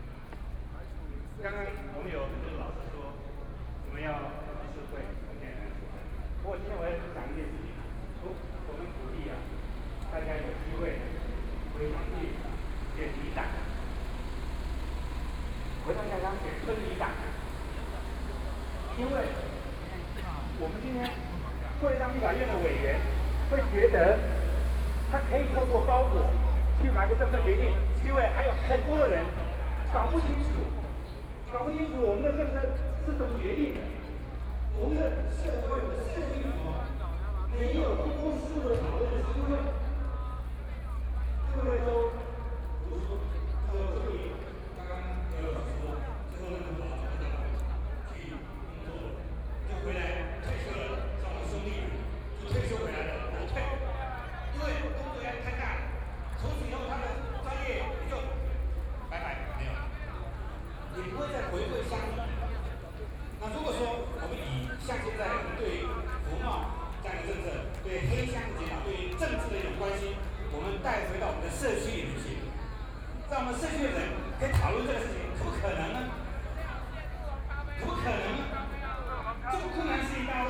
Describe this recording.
Walking through the site in protest, People and students occupied the Legislative Yuan, Binaural recordings